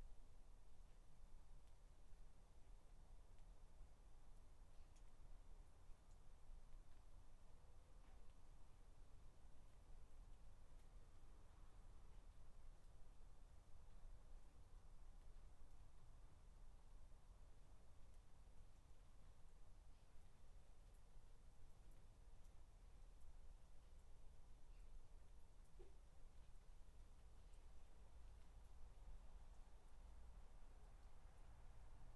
Dorridge, West Midlands, UK - Garden 11
3 minute recording of my back garden recorded on a Yamaha Pocketrak
Solihull, UK, 13 August